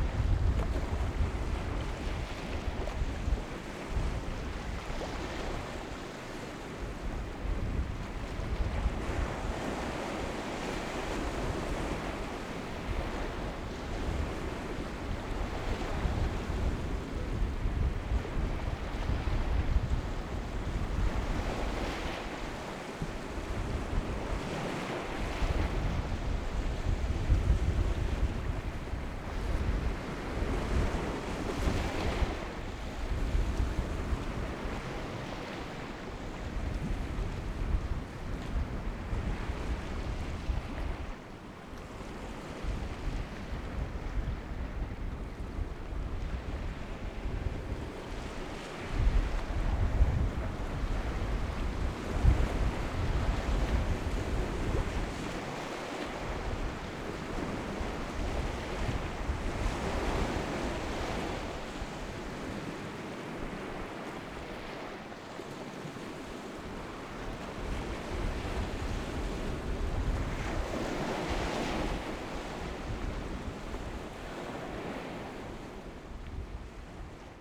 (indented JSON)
{
  "title": "alt reddevitz: strand - the city, the country & me: beach",
  "date": "2010-10-03 16:37:00",
  "description": "on the windy side of the peninsula\nthe city, the country & me: october 3, 2010",
  "latitude": "54.32",
  "longitude": "13.61",
  "timezone": "Europe/Berlin"
}